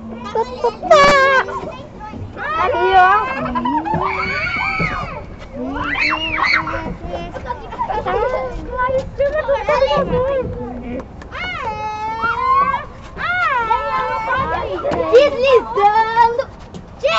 After appreciating various soundscapes on this site, we recorded the soundscape of our school's playground. The students remained silent for the first minute to record the sounds from this place and also external sounds. Then, the students started playing, recreating the sounds of children using the playground.
Depois de apreciar várias paisagens sonoras no site, gravamos a paisagem sonora do parquinho de nosso colégio. Os(as) alunos(as) permaneceram em silêncio durante o primeiro minuto para registrar os sons do ambiente e externos ao parquinho. Em seguida, os(as) estudantes começaram a brincar pelo parquinho, simulando este ambiente enquanto está sendo utilizado por crianças.